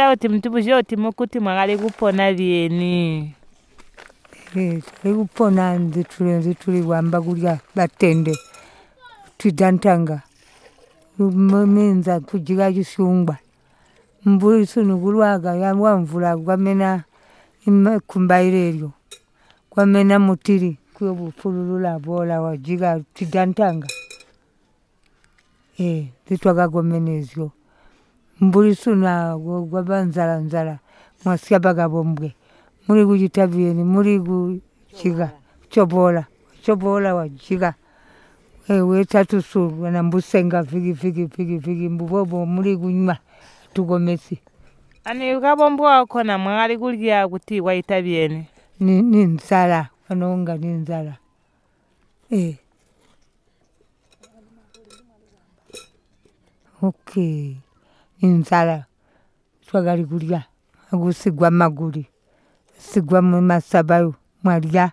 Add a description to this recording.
Janet Muleya, an elder of Chibondo village, responds to Margaret’s questions about bush fruits and local trees and how they used to cook and prepare the leaves or roots as dishes. Margaret asks her for a song, but she declines…